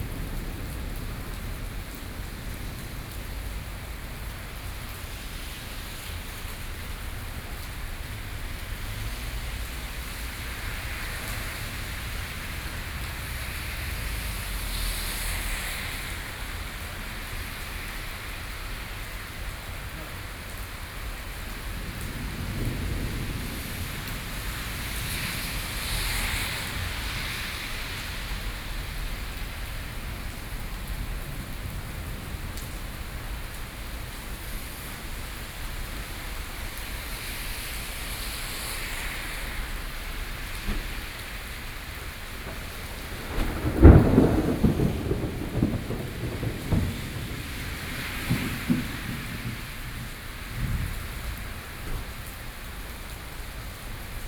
tamtamART.Taipei - Thunderstorm
Thunderstorm, Standing in the doorway, Sony PCM D50 + Soundman OKM II
中正區 (Zhongzheng), 台北市 (Taipei City), 中華民國, 23 June 2013, 16:18